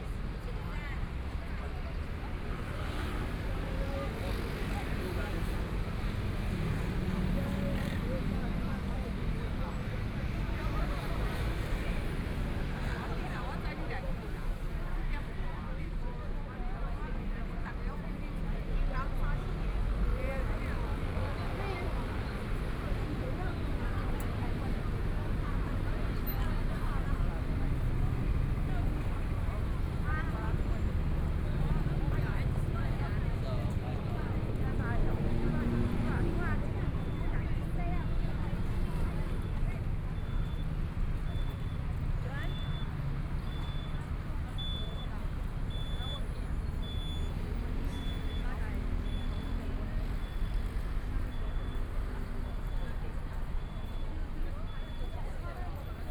Minquan E. Rd., Songshan Dist. - At the intersection
At the intersection, Traffic Sound, The crowd waiting to cross the road, Binaural recordings, Zoom H4n + Soundman OKM II
Taipei City, Taiwan, 20 January 2014